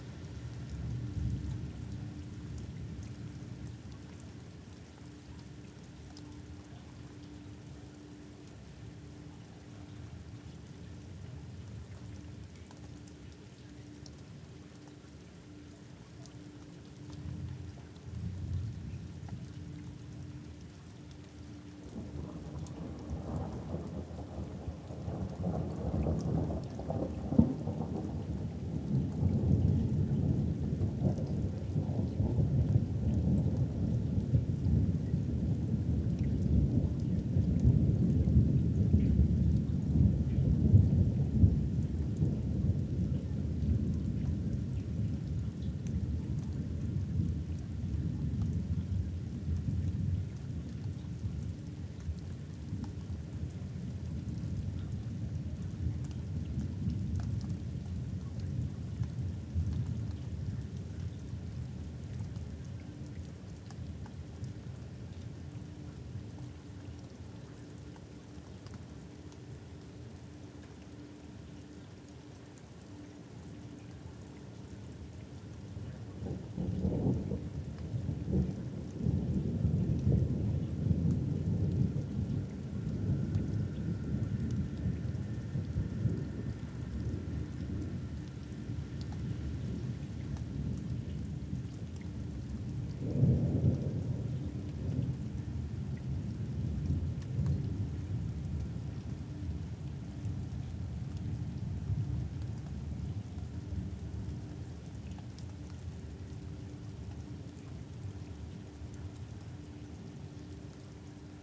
{"title": "Thunderstorm over Katesgrove, Reading, UK - The mid-section of an immense thunderstorm", "date": "2014-06-14", "description": "We had been having an intense family discussion, and the mood in the house was a little oppressive. Suddenly the sense that a mighty storm was breaking outside replaced the heavy mood with one of excitement. Forgetting all about whatever we had been discussing, we ran to the door and stood in the doorway watching fork lightning driving down through the dark sky, and listening to thunder rumble overhead. It was incredibly loud and bright, and I had the sense that the whole sky was cracking. When it first began it was very explosive and loud, but my batteries were dead and the only way to create recordings was by plugging the recorder into the mains, which didn't feel like such a great option, but how could I miss the opportunity to record this amazing storm? I strapped my Naint X-X microphones onto the latch of the opened window and plugged them into the FOSTEX FR-2LE. Then I lay on the floor in the dark while everyone else slept, wondering when the storm would die down.", "latitude": "51.44", "longitude": "-0.97", "altitude": "53", "timezone": "Europe/London"}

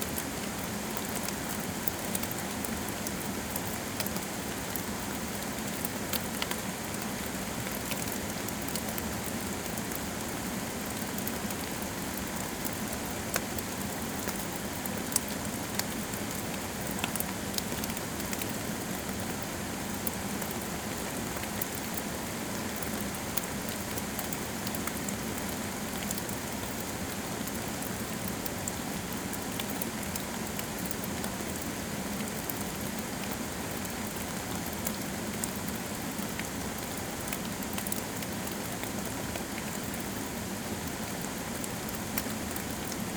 {"title": "Montagnole, France - Storm", "date": "2017-06-06 12:35:00", "description": "Recording of a small storm, with recorder placed in the very small hole of the entrance. We heard some deaf sounds, perhaps four or five, and thought : wow, it's seriously collapsing now somewhere in the underground mine. But, it was only thunder sounds reverberating in the tunnels. Just after the recording, a dam broke and an entiere river collapsed into the underground mine. It was terrific ! That's why on the spelunking map we had, there's a lake mentioned. No mystery, it's arriving each storm.", "latitude": "45.53", "longitude": "5.92", "altitude": "546", "timezone": "Europe/Paris"}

